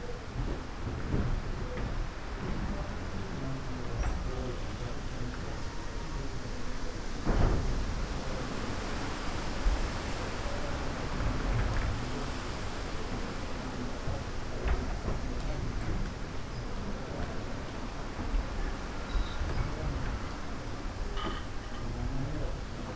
dry garden, Daisen-in, Kyoto - facing ocean
... a single plum flower
blossoming beyond time
gardens sonority